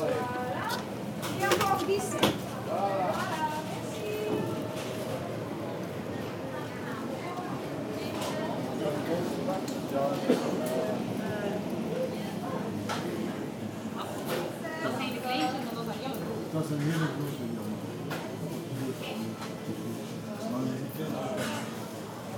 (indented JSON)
{
  "title": "De Panne, Belgique - Local market",
  "date": "2018-11-17 10:00:00",
  "description": "On a sunny saturday morning, the local market of De Panne. The sellers speak three languages : dutch, french and a local dialect called west-vlaams.",
  "latitude": "51.10",
  "longitude": "2.59",
  "altitude": "12",
  "timezone": "Europe/Brussels"
}